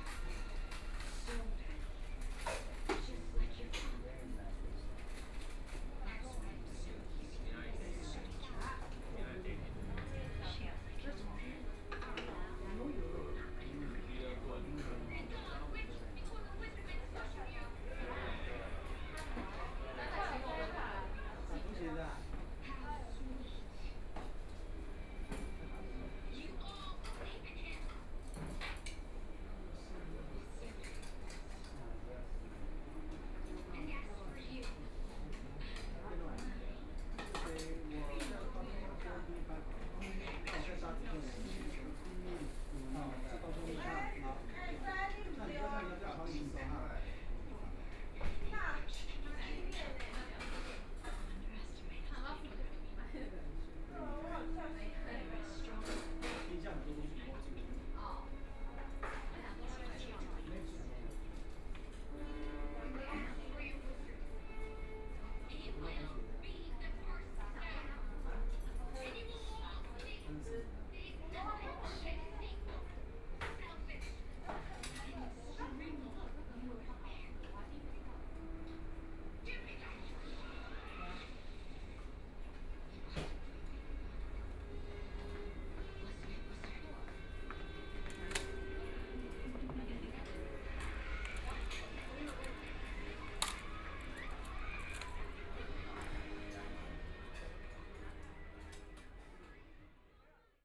中山區永安里, Taipei city - soundwalk
Walking on the road, Then enter the restaurant, Traffic Sound, Binaural recordings, Zoom H4n+ Soundman OKM II
Taipei City, Taiwan, 16 February 2014